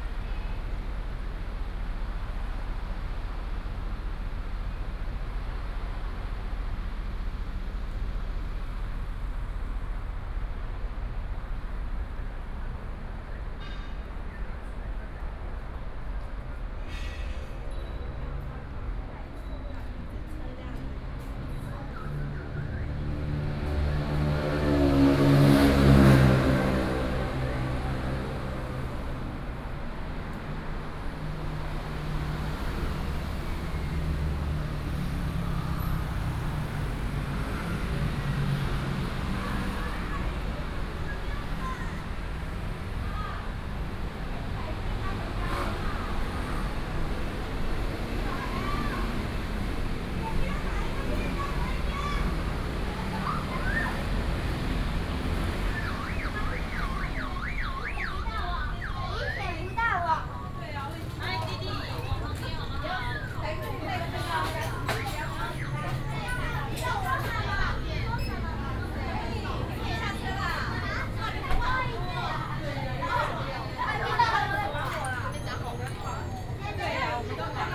Sec., Jianguo S. Rd., Da'an Dist. - Walking on the road
Traffic noise, Building site, Go into the convenience store, Pupils
25 June 2015, ~16:00